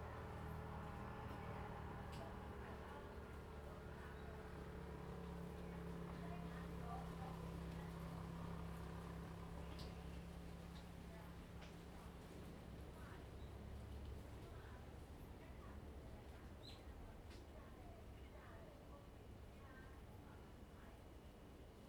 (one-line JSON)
{"title": "觀音洞, Lüdao Township - Outside the temple", "date": "2014-10-31 11:48:00", "description": "Footsteps, Outside the temple, Birds singing\nZoom H2n MS +XY", "latitude": "22.67", "longitude": "121.51", "altitude": "49", "timezone": "Asia/Taipei"}